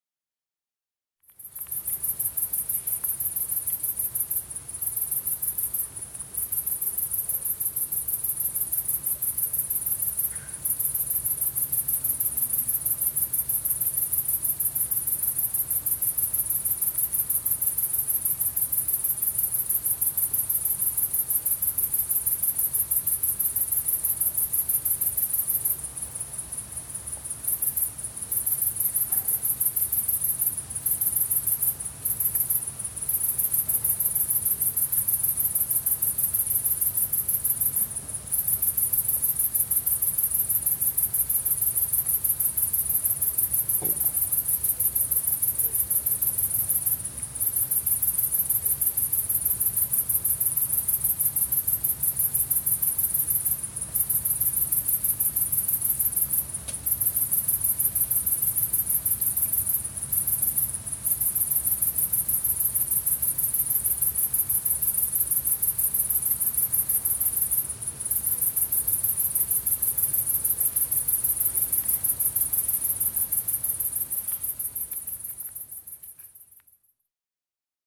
La Coursonnière, Saint-Ouen-des-Alleux, France - Crickets Chorus near the Couesnon.
Singing crickets near the Couesnon on a quiet summer night.